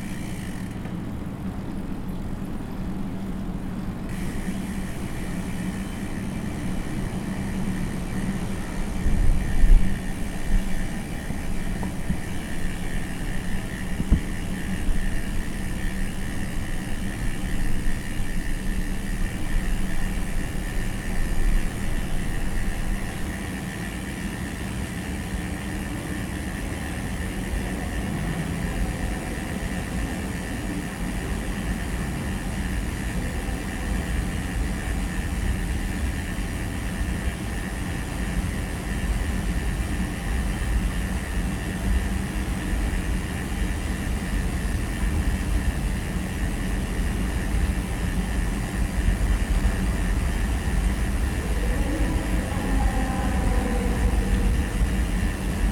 {
  "title": "Intérieur galerie cycliste tunnel du Chat, Saint-Jean-de-Chevelu, France - Galerie tunnel du Chat",
  "date": "2022-07-25 11:10:00",
  "description": "Enregistrement en roulant dans la galerie du tunnel du Chat destinée aux cyclistes et piétons, un léger faux plat montant suivi d'un faux plat descendant, vent dans le dos. Un endroit dont on apprécie la fraîcheur en cette période de chaleur. Bruit de fond de la ventilation .",
  "latitude": "45.69",
  "longitude": "5.84",
  "altitude": "649",
  "timezone": "Europe/Paris"
}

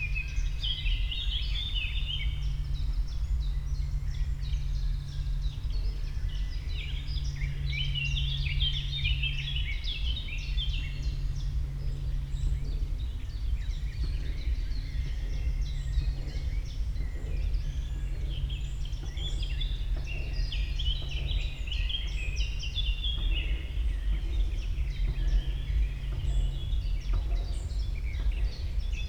{
  "title": "Röblinsee, Fürstenberg/Havel, Deutschland - river havel, forest ambience",
  "date": "2016-07-02 18:40:00",
  "description": "Röblinsee, Fürstenberg, the river Havel connects the many lakes in this area. Wind, birds, work sounds and a distant sound system\n(Sony PCM D50, Primo EM172)",
  "latitude": "53.19",
  "longitude": "13.12",
  "altitude": "64",
  "timezone": "Europe/Berlin"
}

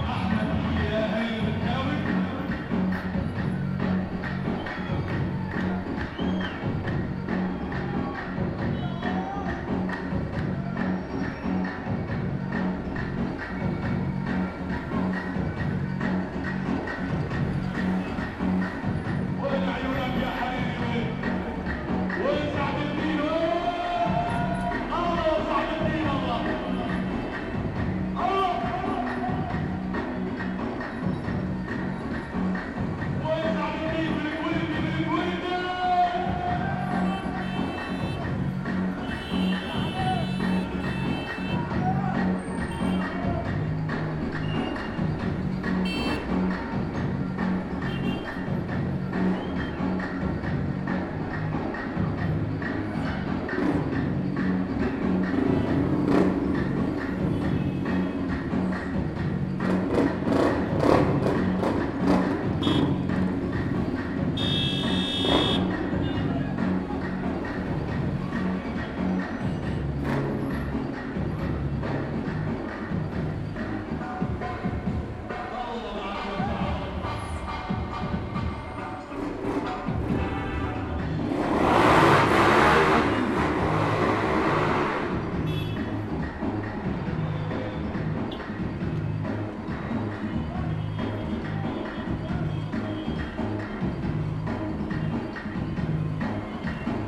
{
  "title": "LEVIT Institute, Tripoli, Libanon - Rafik Hariri Day",
  "date": "2018-02-14 09:14:00",
  "description": "Recorded with a PCM D-100 - celebrations of Rafik Hariri",
  "latitude": "34.44",
  "longitude": "35.84",
  "altitude": "24",
  "timezone": "Asia/Beirut"
}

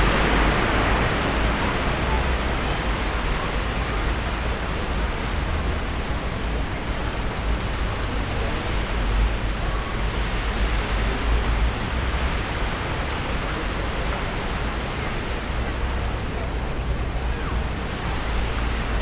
{
  "title": "Kolon Pasealekua, Donostia, Gipuzkoa, Espagne - facing the ocean",
  "date": "2022-05-26 11:41:00",
  "description": "facing the ocean wave and city sound\nCaptation ZOOM H6",
  "latitude": "43.33",
  "longitude": "-1.97",
  "altitude": "14",
  "timezone": "Europe/Madrid"
}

